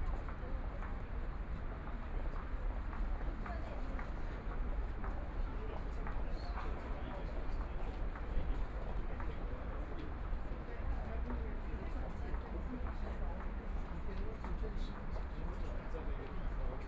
Lujiazui, Pudong New Area - Follow the footsteps
Follow the footsteps, Binaural recording, Zoom H6+ Soundman OKM II
Shanghai, China